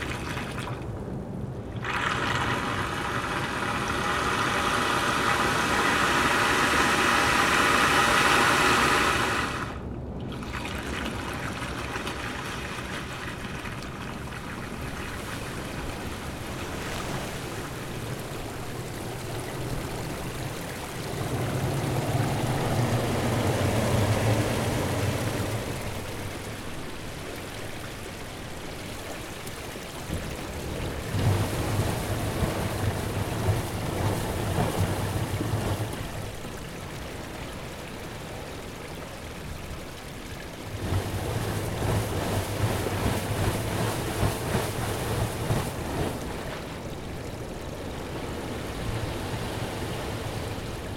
Dans des crevasses entres les rochers, les vagues
tourbillonnent .Traveling.Écoulements.La mer au loin.
Into differents crevasse, Facing the sea.Waves come from below and create swirls. flowing.